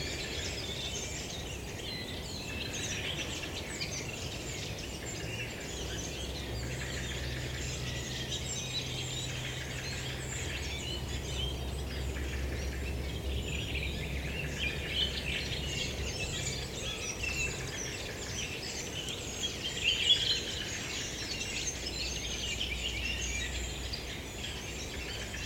On saturday I went to to natural geomorphologic reserve in hope to escape men made noise...failed. the hum of highway, even in quarantine times, is prevailing...